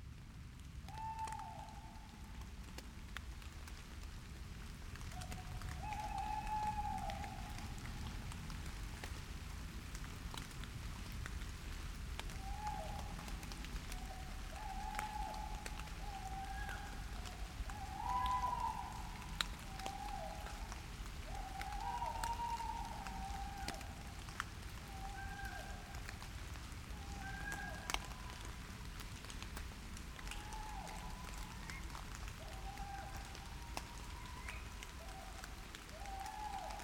Warburg Nature Reserve, Bix Oxon - Tawney Owls in the gentle Rain
I've been able to spend a wonderful lockdown daily exercise walk at this nature reserve close to my home over the last year (lucky me). Frequent visits have been accompanied by aeroplanes, other visitors, cars arriving and leaving and other Androphony. Last night it was gently raining and the Owls performed in a way that sounded more like an orchestra, got to say, being in that place for a couple of hours each day in silence has helped me big time mentally with the C19 effect of lockdown. Pluggies into a Tascam with handmade hard foam add-ons.